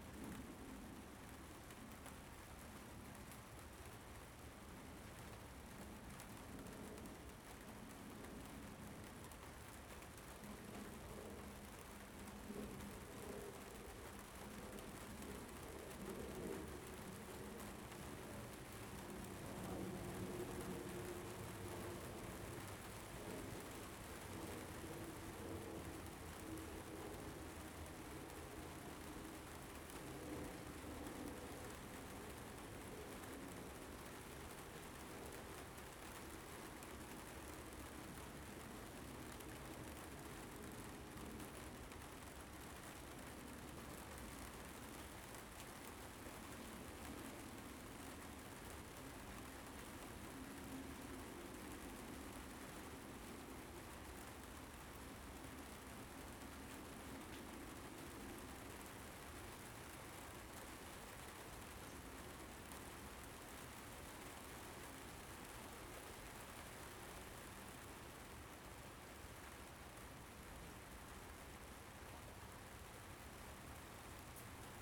Shaw St, Toronto, ON, Canada - Summer rainstorm from back porch
Rainstorm (and cat) from covered back porch.